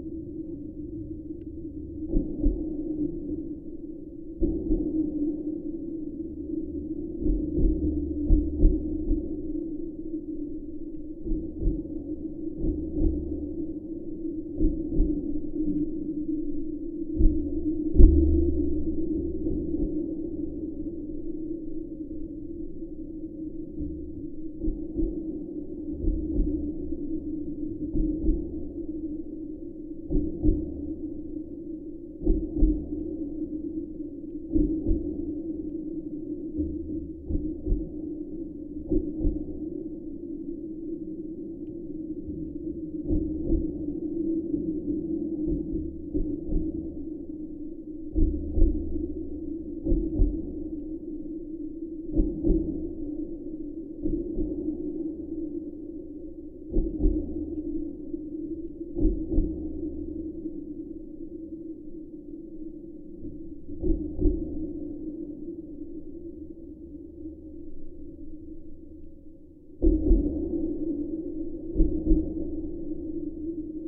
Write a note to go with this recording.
Geophone on the holding construction of Vytautas The Great Bridge